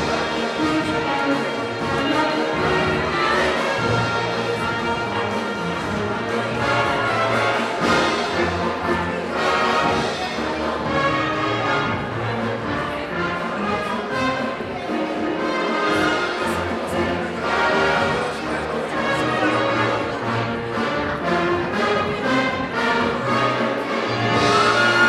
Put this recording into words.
80th anniversary of local library. big band playing, audience and ambience